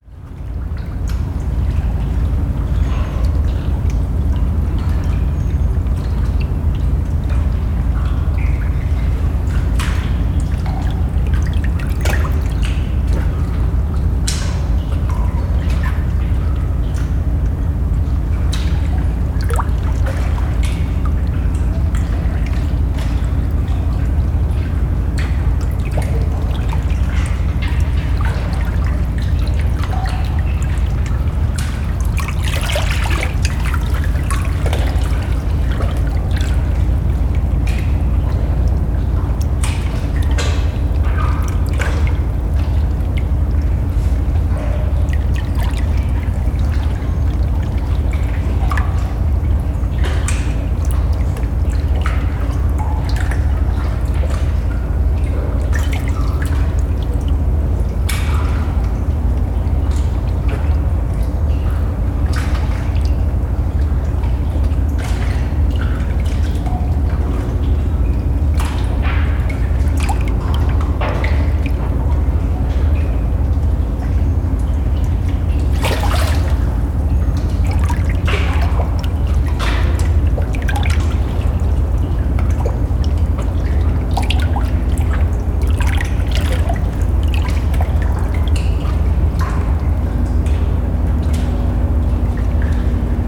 Gamle Oslo, Norway - On the pier, close to the opera. The Underworld Special.
Walking on the pier close to the Oslo opera recording. The ferry going to Denmark on the other side of the harbour. Recording in a water dripping cave underneath the pier.
Recorded with a Zoom H4n.
30 August